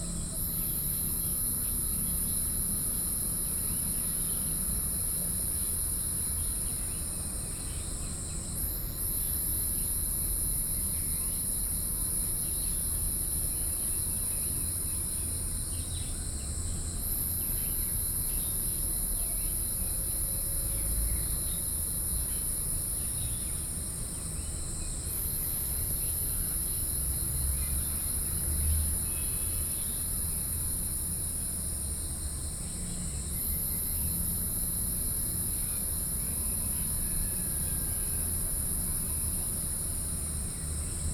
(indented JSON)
{
  "title": "金龍湖, 汐止區, New Taipei City - Morning at the lake",
  "date": "2012-07-16 05:59:00",
  "description": "Early in the morning, Morning at the lakes\nBinaural recordings, Sony PCM D50",
  "latitude": "25.07",
  "longitude": "121.63",
  "altitude": "14",
  "timezone": "Asia/Taipei"
}